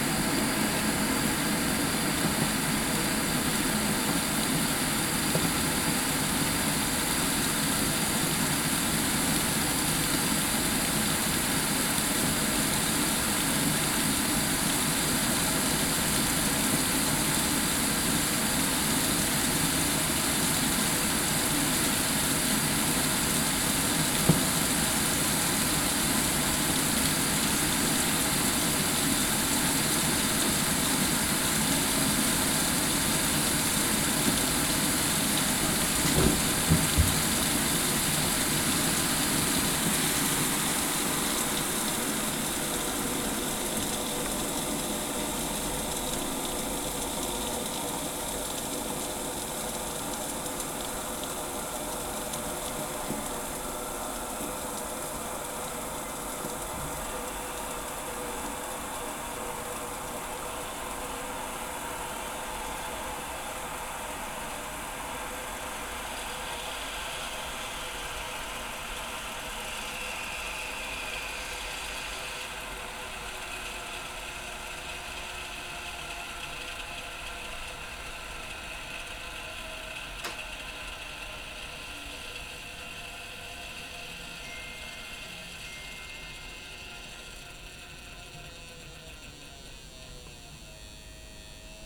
Poznan, Kochanowskiego street - kettle warm up/cool down
boiling water for tea in a tin kettle. Buildup and part of the cool down. (sony d50)